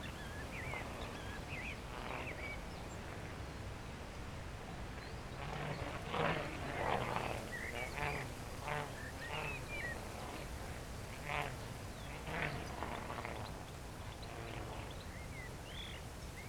Tempelhof, Berlin, Deutschland - former shooting range, wind, kite
at a former shooting range, under a tree, wind and a kite, some birds
(SD702, S502ORTF)